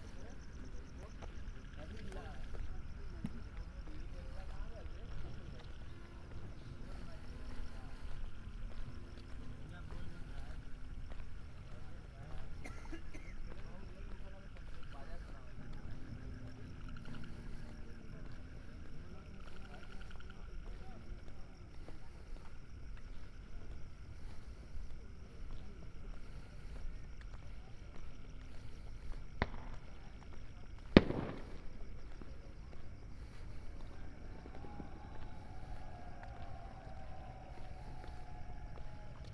a night walk along the ghats during a black out - the frogs kept me company, some sadus by their fires and fire works... march 2008
uttar pradesh, india